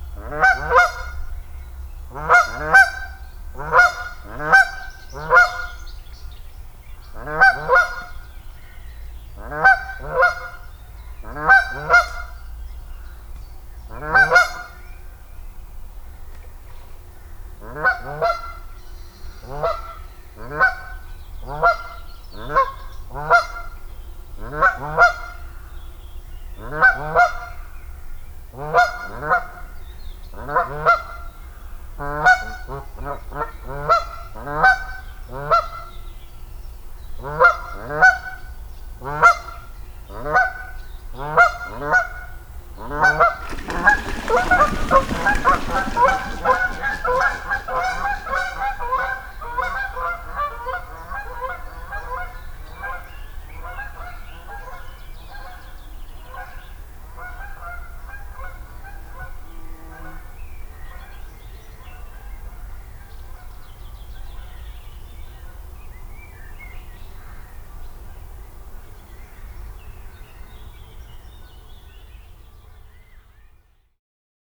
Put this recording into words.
Two geese object strongly to my presence at this small lake. At the end they takeoff noisily calling back their anger. Recorded with a MixPre 3 with 2 x Beyer Lavaliers + Rode NTG3.